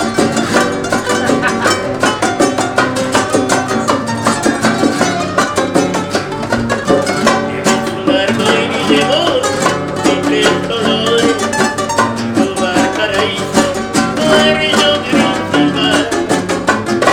La joya del pacífico interpretada por el trío Dilema, Mercado El Cardonal
Valparaíso Region, Chile